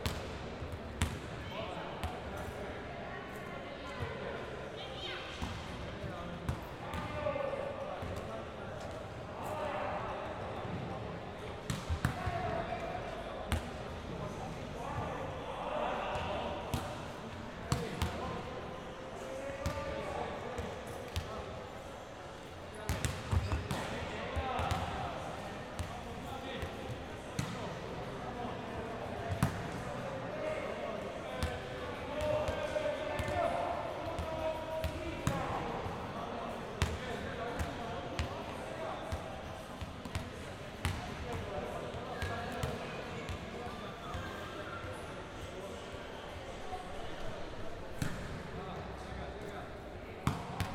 KR 87 # 48 BB - 30, Medellín, Antioquia, Colombia - Coliseo, Universidad de Medellín
Descripción
Sonido tónico: Entrenamiento de Voleibol
Señal sonora: Golpes al balón
Grabado por Santiago Londoño Y Felipe San Martín
23 September, 13:10